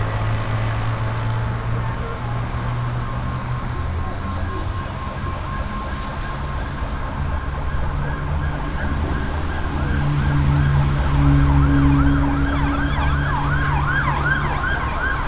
Paseo de la Reforma - Eje 1 Poniente Bucareli, Juárez, 06600 Ciudad de México, CDMX, Mexiko - Paseo de La Reforma Nte
Quite normal city traffic
22 April 2016